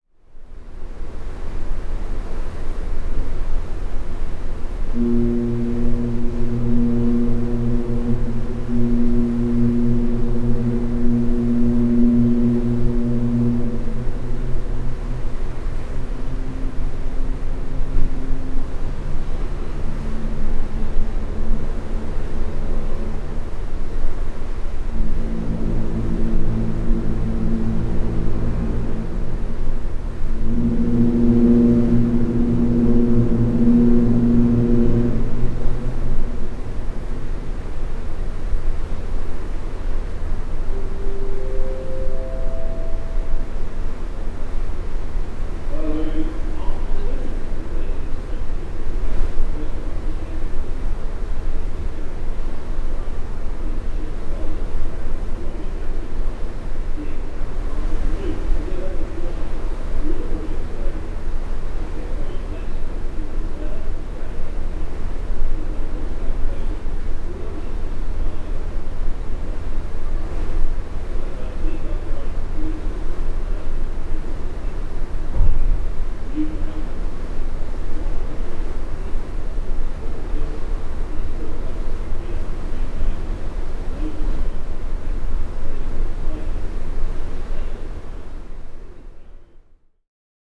Recorded outside on the balcony of a cabin on Deck 5 enroute to New York. The QM2 tests her whistles and fog signals at noon every day followed here by a distant version of passenger information over the communication system in the interior of the ship. The fog signals are at the bow and the main whistle on the funnel nine decks above. The Atlantic passing at 24 knots is the background sound.
MixPre 3 with 2 x Beyer Lavaliers.